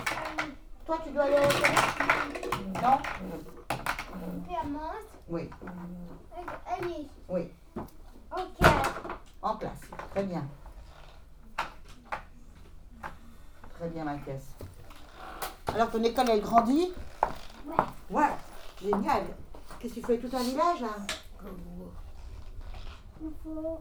Escalpade school is a place intended for children who have intellectual disability, learning disability and physical deficiency. This school do Bobath NDT re-education (Neuro Developpemental Treatment).
This recording is a time of course. Children have to draw a bridge and some other have to build a bridge with legos.
Ottignies-Louvain-la-Neuve, Belgium, 24 March 2016